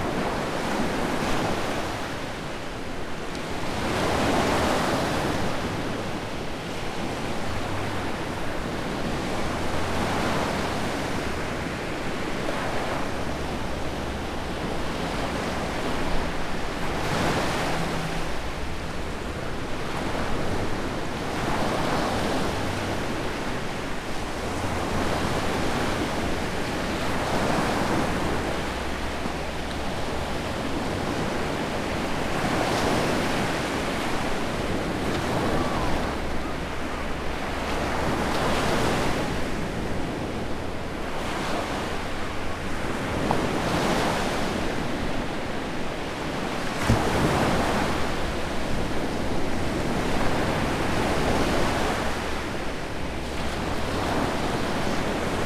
Mamaia Beach, Constanța, Romania - Nightime Sea Sounds
A night recording on a beach in Mamaia, Romania. Being a popular destination for tourists, beaches in Mamaia are usually quite crowded and consequently the bars play music at all times. It is difficult to find a spot where you can just listen to the sea. There are some sweet spots in between terraces, but even there the bass travels and is present. This is the rumble that you hear in the lows, it is of a musical origin and not microphone issues. With EQ it can obviously be cleaned but this creates an impression of what could be and not what it actually is. Recorded on a Zoom F8 using a Superlux S502 ORTF Stereo Mic.